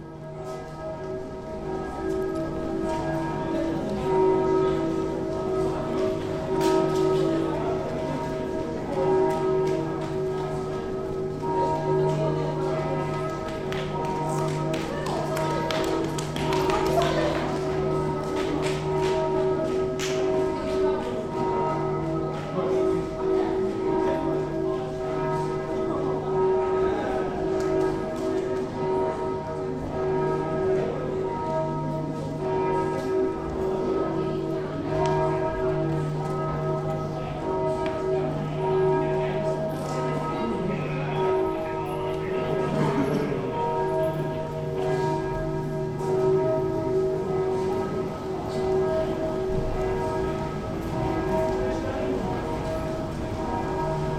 {"title": "saint Jilji church in Staré město", "description": "soundscape from entrance and interiour of the church of saint Jilji in Husova street", "latitude": "50.09", "longitude": "14.42", "altitude": "202", "timezone": "Europe/Berlin"}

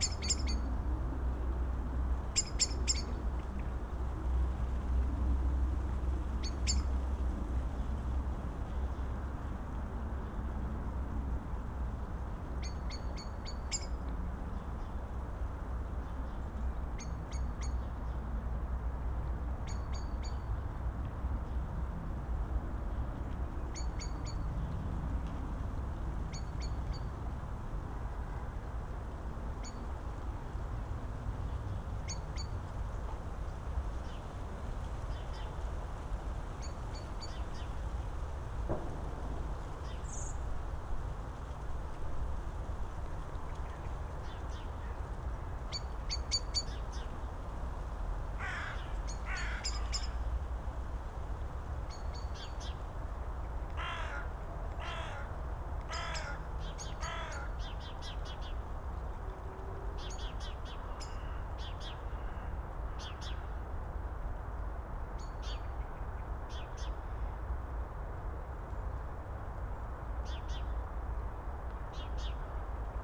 {"title": "Tempelhofer Feld, Berlin, Deutschland - afternoon in December, ambience", "date": "2018-12-22 15:40:00", "description": "relatively quiet early winter afternoon at the poplars\n(SD702, AT BP4025)", "latitude": "52.48", "longitude": "13.40", "altitude": "42", "timezone": "Europe/Berlin"}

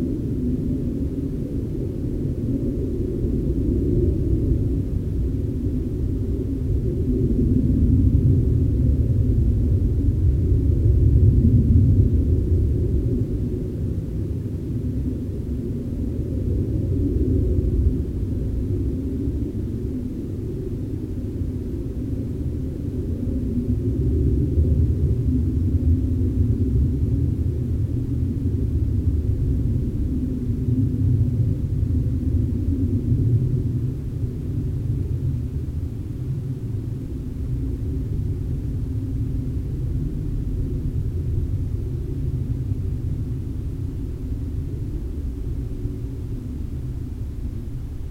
{"title": "Keeler, CA, USA - Airplanes flying over Owens Lake", "date": "2022-08-25 11:00:00", "description": "Metabolic Studio Sonic Division Archives:\nAirplanes flying over Owens Lake. First airplane you hear is flying very low to ground. Occasional traffic sound. Recorded with Zoom H4N", "latitude": "36.45", "longitude": "-117.84", "altitude": "1127", "timezone": "America/Los_Angeles"}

